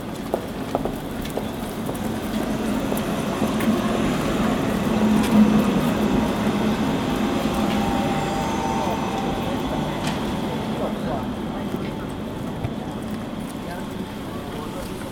Innere Stadt, Graz, Österreich - Winterstimmung
Die Herrengasse liegt Mitten im Zentrum von Graz und ist die größte Einkaufsstraße. Die Aufnahme wurde exakt in der Mitte der Herrengasse mit einem H2n zoom gemacht.
Graz, Austria, 8 January, 15:30